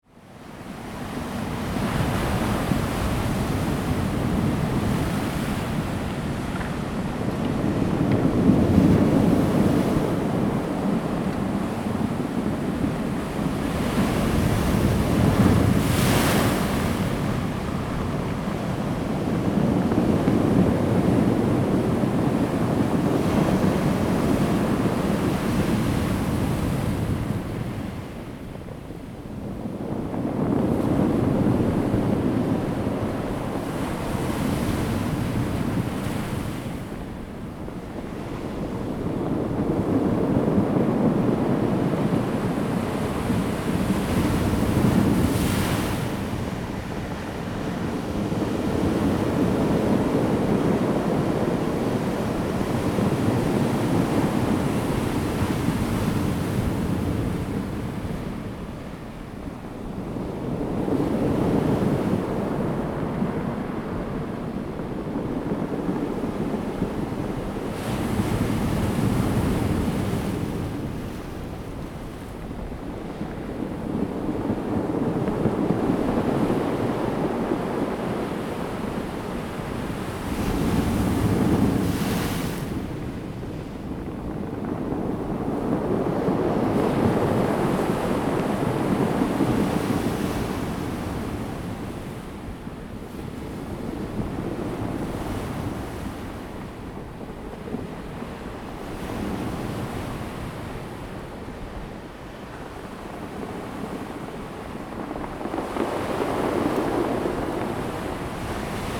達仁鄉南田村, Taitung County - Rolling stones
Sound of the waves, Rolling stones
Zoom H2n MS +XY